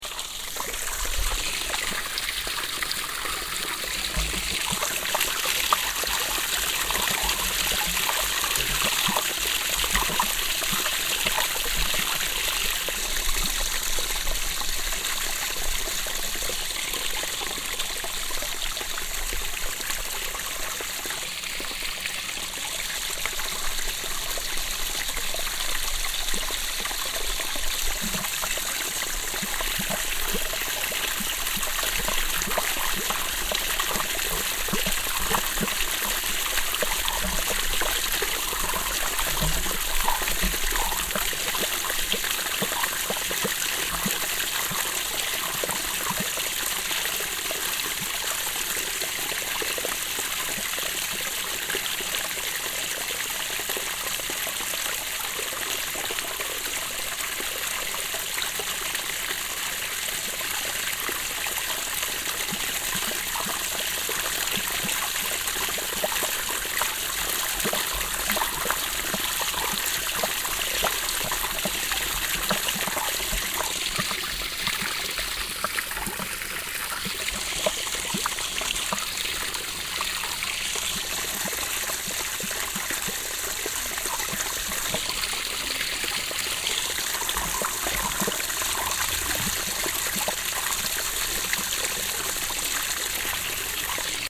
a small lake up the mountain, a natural water source sprinkling out of a stone
soundmap international: social ambiences/ listen to the people in & outdoor topographic field recordings